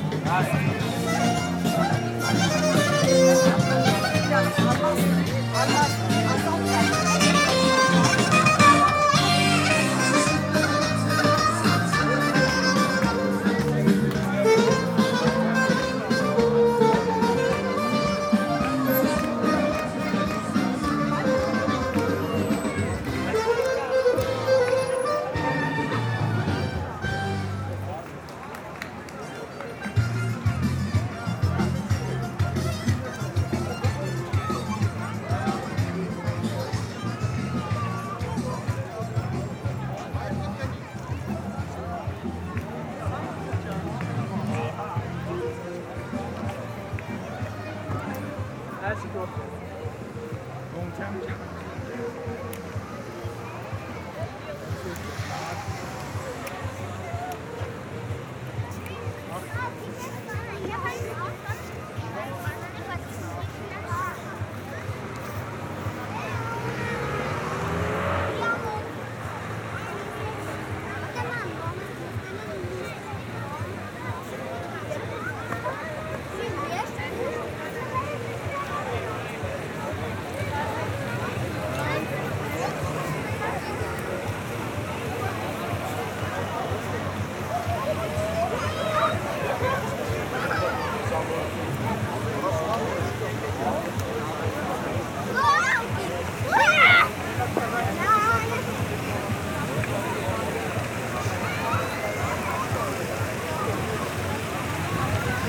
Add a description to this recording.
A walk along the Republic square. Since the revolution, people go out on evening and talk to each other’s. During this time, children play with the fountains, or play with strange blue light small boomerang. Euphoria is especially palpable. Happiness is everywhere, it's a pleasure.